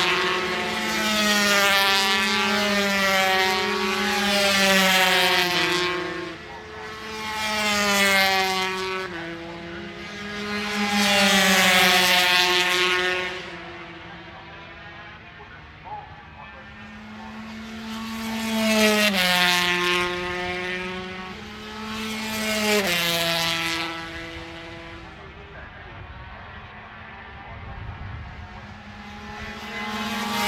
Unnamed Road, Derby, UK - British Motorcycle Grand Prix 2004 ... 125 warm up ...
British Motorcycle Grand Prix 2004 ... 125 warm up ... one point stereo mic to minidisk ...
25 July 2004